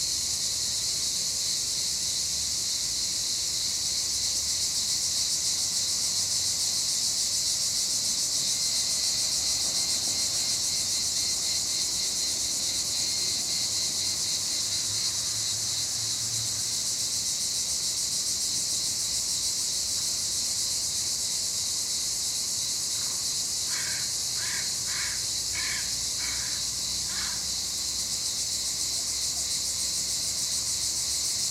Cicadas, crow, other bird and rare traffic.
Recorded in Mirada Del Mar Hotel with Zoom H2n 2ch surround mode